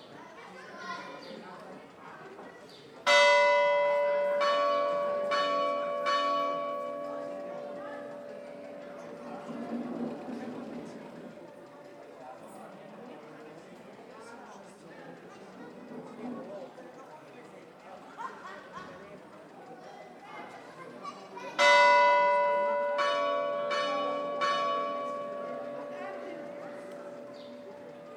Tàrbena - Province d'allocante - Espagne
Ambiance du soir sur la place du village.
ZOOM F3 + AKG C451B